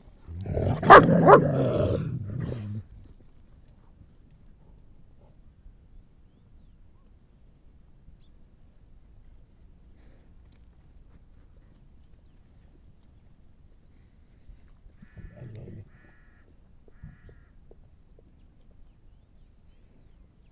sent at 09.03.2011 23:00
Dogs, Michael, tent
Bolu Province, Turkey, 12 July 2010, 22:57